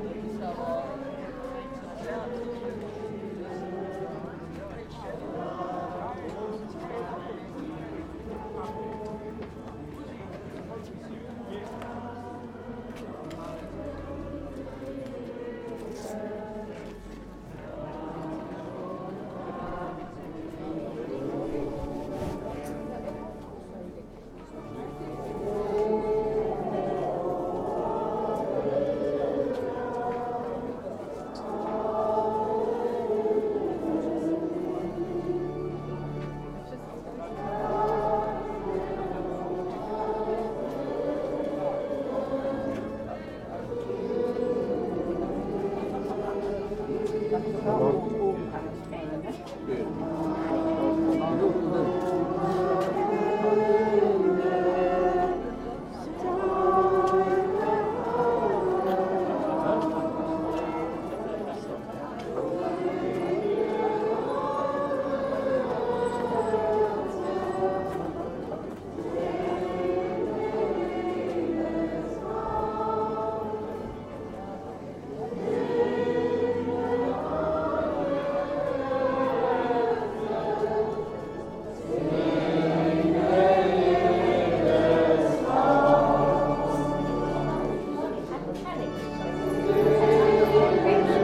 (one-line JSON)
{"title": "Mariendom, Neviges, Germany - Organ at the end of the church service", "date": "2009-07-27 15:31:00", "description": "Organ at the end of the church service celebrating the end of a silesian \"Mother Anna Pilgrimage\"", "latitude": "51.31", "longitude": "7.09", "altitude": "162", "timezone": "Europe/Berlin"}